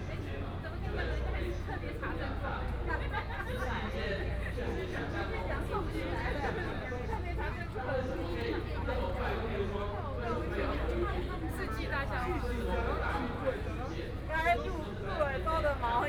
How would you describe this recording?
Walking through the site in protest, People and students occupied the Legislature Yuan